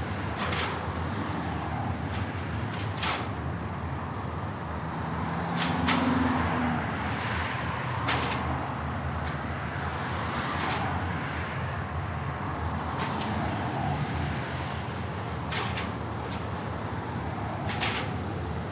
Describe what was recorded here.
Here are the cables inside the lamppost clanging in the wind as they sound when you pass by, with all the traffic and noise and wind from around as well as the magnificent clanking sound. Sorry about the wind, I was using a little wind fluffy on the EDIROL R-09 but the breeze was a bit much for it.